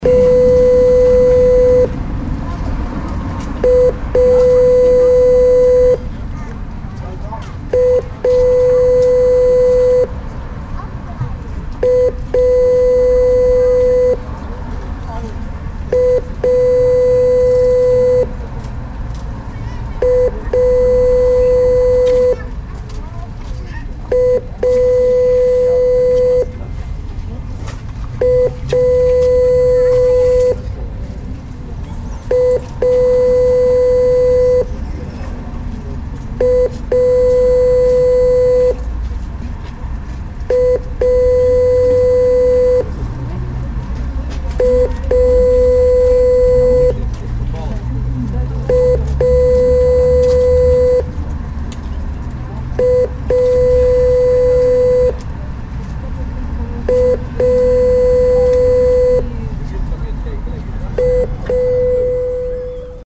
a non functional ticket automat - constantly tooting signals
soundmap nrw: social ambiences/ listen to the people in & outdoor topographic field recordings
greinstreet, parking area, August 2009